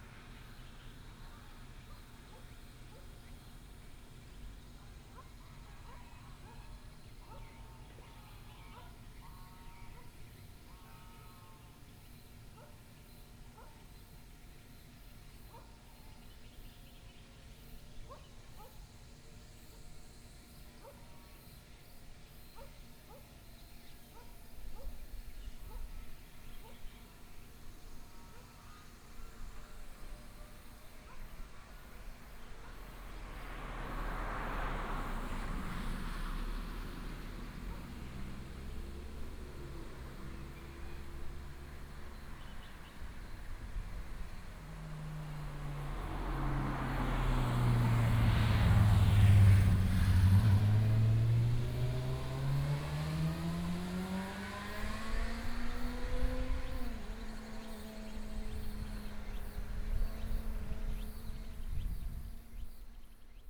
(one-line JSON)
{"title": "北河村活動中心, Gongguan Township - Small settlements in the mountains", "date": "2017-09-24 16:36:00", "description": "Small settlements in the mountains, traffic sound, The sound of birds, The dog sound came from afar, Binaural recordings, Sony PCM D100+ Soundman OKM II", "latitude": "24.54", "longitude": "120.86", "altitude": "76", "timezone": "Asia/Taipei"}